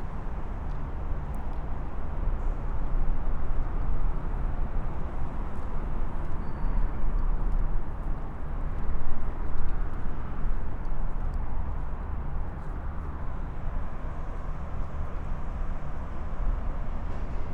Montreal: Autoroute 40 Spaghetti Junction - Autoroute 40 Spaghetti Junction
equipment used: M-Audio Microtrack Stereo Cardoid Mic
I walked around the overpass, taking note of the traffic, the drops of water from overhead, and the majestic pigeons.
QC, Canada, 10 March 2009